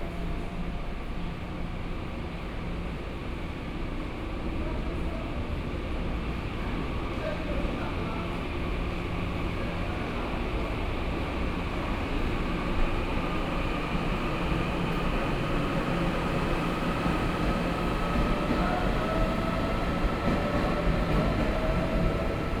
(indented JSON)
{
  "title": "Yilan Station, Yilan City - Walking in the station",
  "date": "2014-07-05 08:30:00",
  "description": "From the station platform, Through the underpass, Went to the square outside the station\nSony PCM D50+ Soundman OKM II",
  "latitude": "24.75",
  "longitude": "121.76",
  "altitude": "12",
  "timezone": "Asia/Taipei"
}